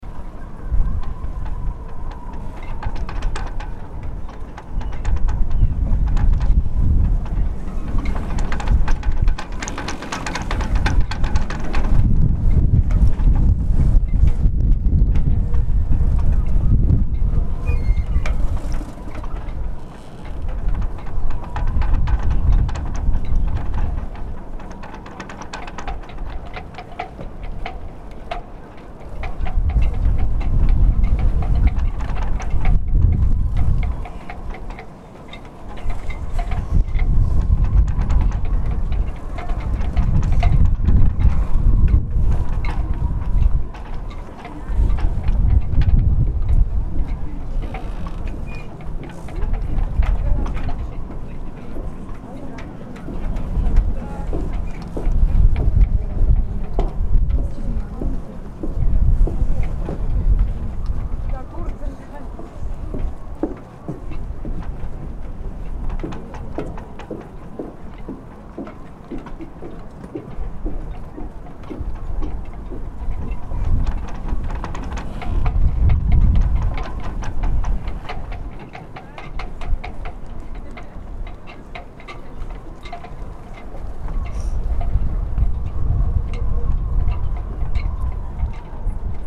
Nabrzeże Beniowskiego, Gdynia, Polska - Ropes striking the mast of a small yacht.
Sunny autumn day in the marina. Moderate wind, wooden platform, walking people, seagulls. Olympus LS11
Gdynia, Poland, 29 October 2016, ~14:00